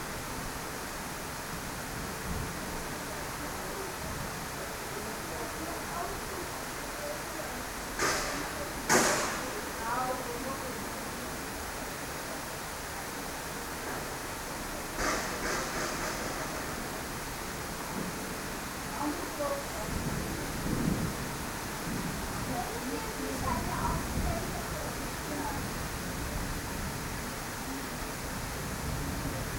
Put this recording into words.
after a couple of hot days, it started to rain